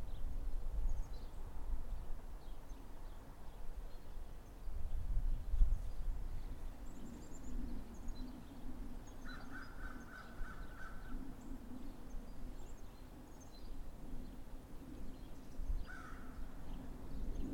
Ambient sound of birds, dogs, and wind recorded at Hickory Hill Park in Iowa City. Recorded on H4n Pro.